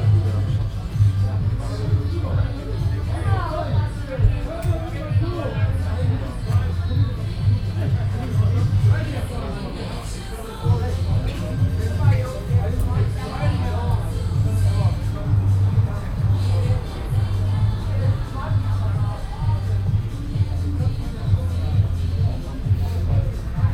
sonntags morgens um 10:00 Uhr - akustische hochstimmung in der von zwei gastronomien bestimmten engen kleinen gasse.
soundmap nrw: social ambiences, art places and topographic field recordings
altstadt, hühnergasse